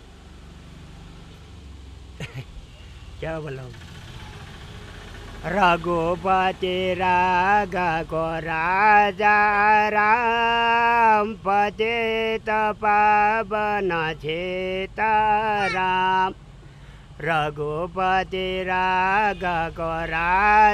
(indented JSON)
{
  "title": "Swarg Ashram, Rishikesh, Uttarakhand, Inde - Rishikesh - Lépreux",
  "date": "2008-06-18 19:15:00",
  "latitude": "30.12",
  "longitude": "78.31",
  "altitude": "339",
  "timezone": "Asia/Kolkata"
}